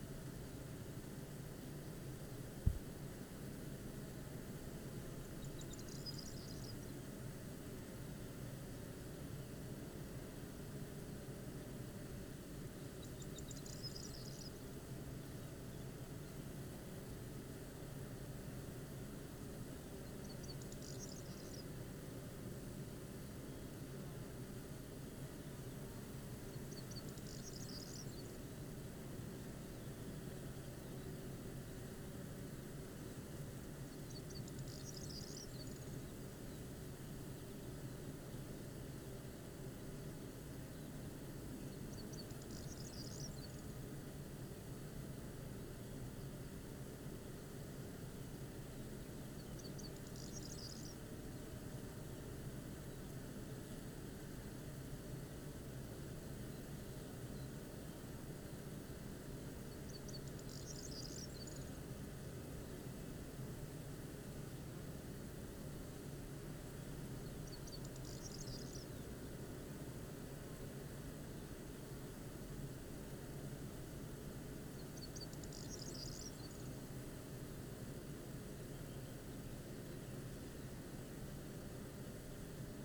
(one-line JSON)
{"title": "Green Ln, Malton, UK - bee hives ...", "date": "2020-06-26 06:45:00", "description": "bee hives ... dpa 4060s clipped to bag to Zoom H5 ... details as above ... as was leaving a sprayer arrived and doused the beans with whatever dressing it was spraying ... no idea what effect would have on the bees or hives ..?", "latitude": "54.13", "longitude": "-0.56", "altitude": "105", "timezone": "Europe/London"}